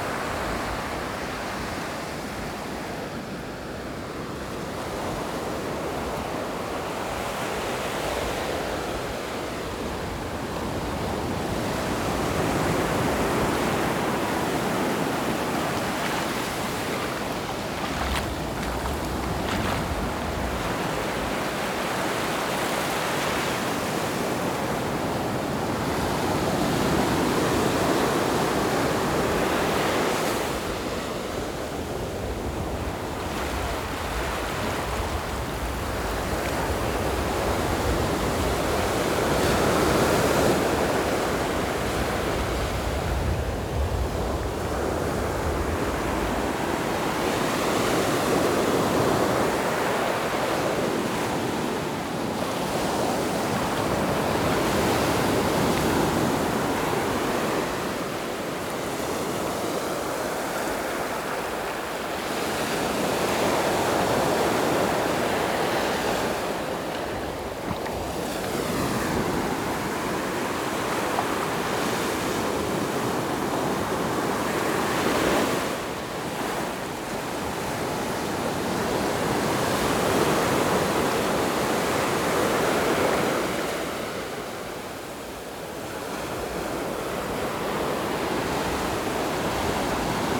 In the beach, Sound of the waves
Zoom H6 MS+ Rode NT4
Yilan County, Taiwan, 26 July